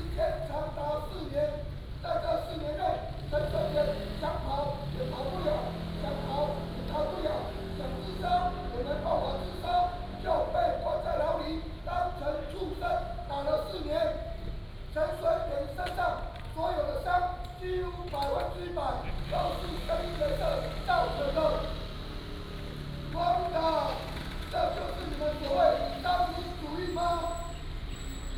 台東火車站, Taiwan - In the station
In the station
Taitung County, Taiwan